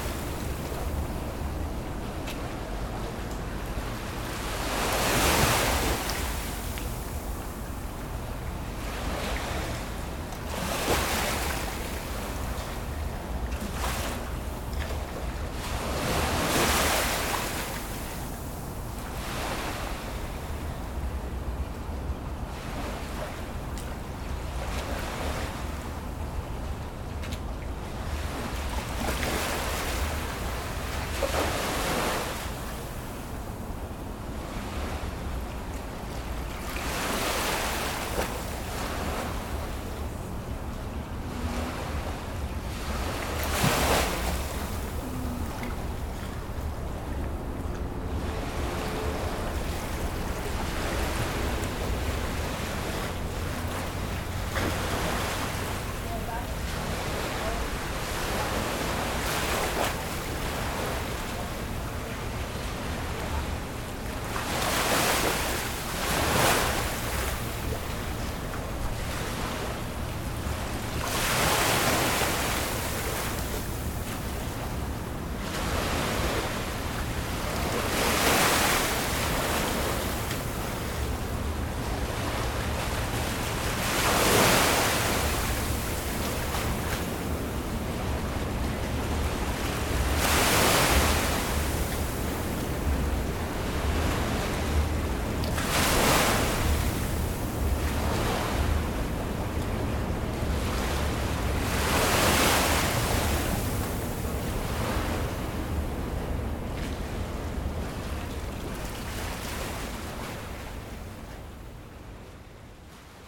Jay St, Brooklyn, NY, USA - East River waves
The sound of waves, East River, Brooklyn.